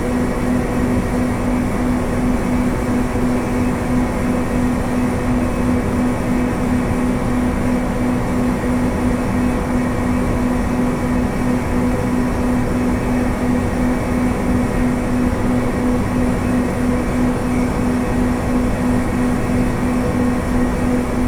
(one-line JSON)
{"title": "Tezno, Maribor, Slovenia - factory ventilation", "date": "2012-06-16 17:10:00", "description": "en exit for a series of ventilation shaft at the side of the factory provided an intense slowly changing drone.", "latitude": "46.53", "longitude": "15.67", "altitude": "275", "timezone": "Europe/Ljubljana"}